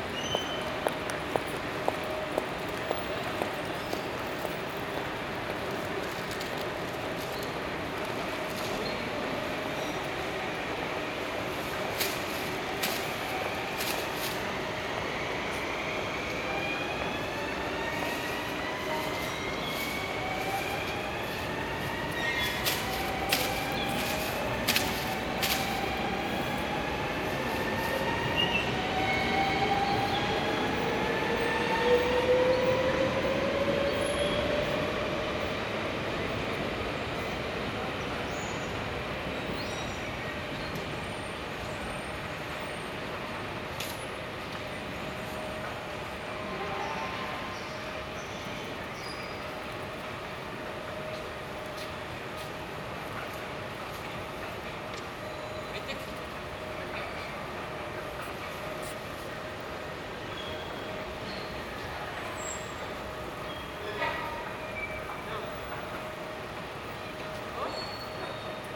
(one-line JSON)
{"title": "Rotterdam Centraal, Stationsplein, Rotterdam, Netherlands - Central Station during pandemic", "date": "2021-02-19 15:00:00", "description": "Recorded on a Friday at 15hrs. Unusually quiet due to the pandemic.", "latitude": "51.92", "longitude": "4.47", "altitude": "1", "timezone": "Europe/Amsterdam"}